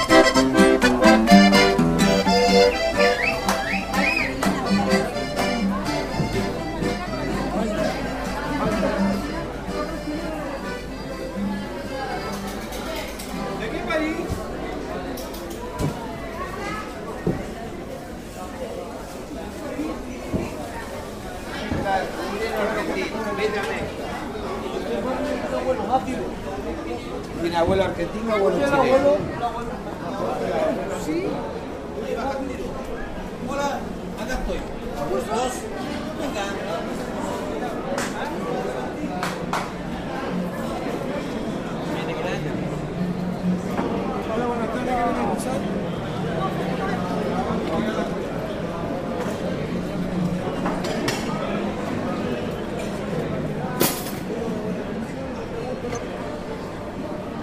Santiago, Région métropolitaine de Santiago, Chili - Al mercado central
Al mercado central
Santiago, Santiago Metropolitan Region, Chile, 13 December 2008, 14:01